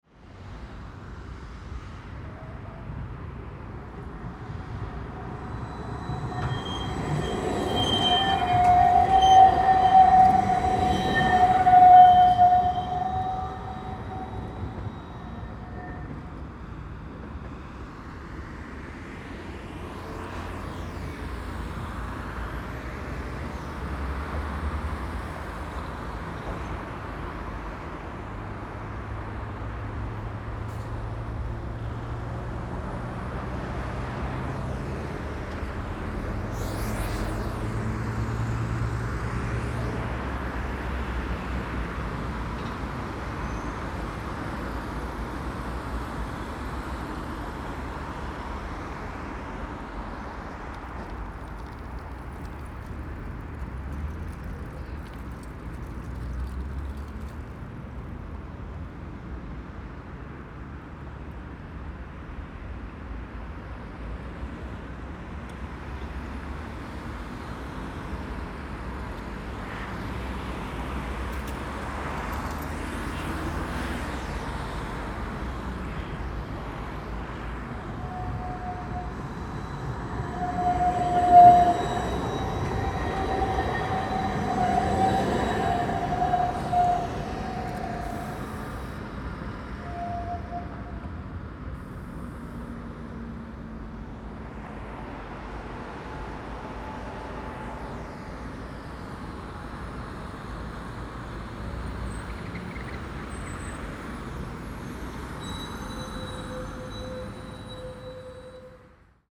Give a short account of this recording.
Close up the sound of tram wheels scrapping against the rails around a bend is quite harsh - as here. Some distance away though it can be a rather musical addition to the wider soundscape.